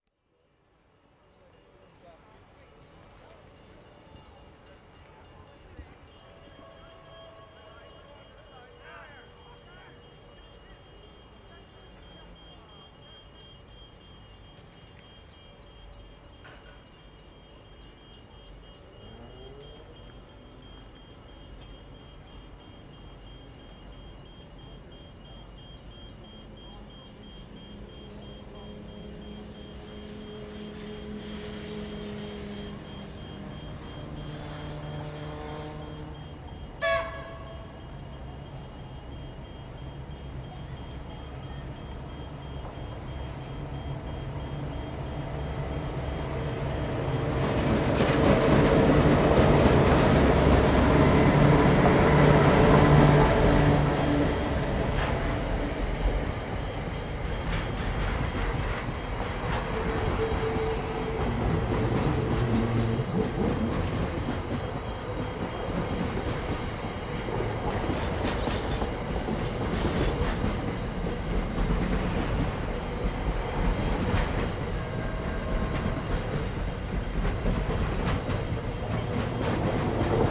Mews Rd, Fremantle WA, Australia - Freight Train Passing Ferris Wheel on the Esplanade
Another recording of the freight train rolling through Fremantle. No sprinklers on the tracks in this section. Recorded with a Zoom H2n with ATH-M40X headphones.